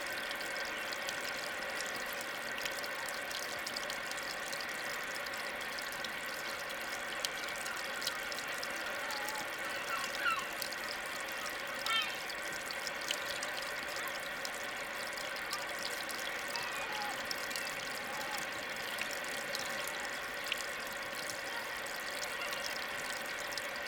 Source of the Douro + Mouth of the Douro
The recording consists of the layering of two soundscapes “In Situ”. The sound of the source of the Douro river, and a recording of the mouth of the river, between the cities of Porto and Vila Nova de Gaia, diffused on location through a pair of portable speakers.
I then recorded both soundscapes using two Oktava mk 012 microphones into a Sound Devices Mix pre 3.
Soria, Castilla y León, España, 18 November 2021